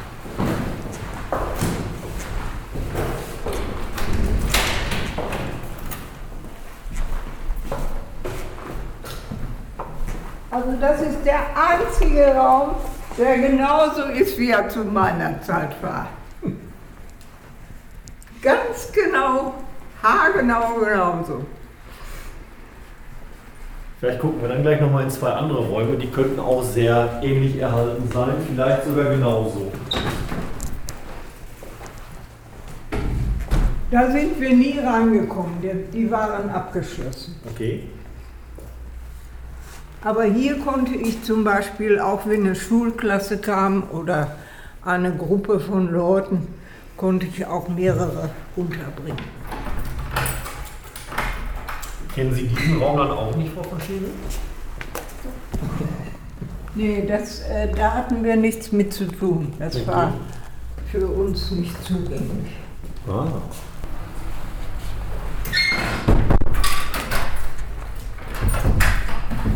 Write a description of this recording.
Finally we enter the boardroom: "This is the only room that has remained exactly the same as before... here I feel at home!!!" Mrs von Scheven had prepared two short texts which she reads for us in the meeting room. Zuletzt betreten wir den Sitzungsraum: “Also dies ist der einzige Raum, der genauso geblieben ist wie früher… hier fühle ich mich zu Hause!!” Frau von Scheven hatte zwei kurze Texte vorbereitet, die sie uns im Sitzungssaal vorliest.